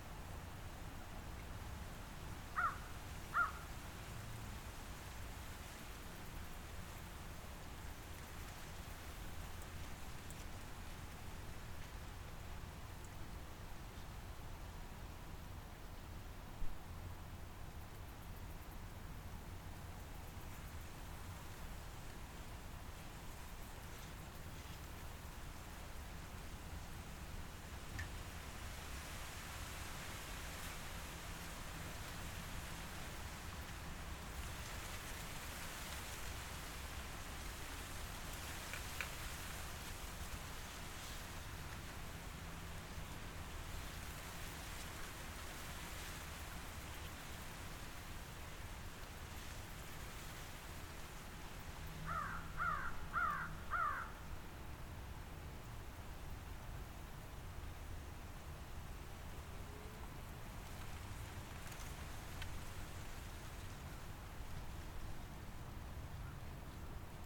Warren Landing Rd, Garrison, NY, USA - Wind, Reeds and Birds
Constitution Marsh Audubon Center and Sanctuary.
Sound of reeds, wind, and birds.
Zoom h6
New York, United States of America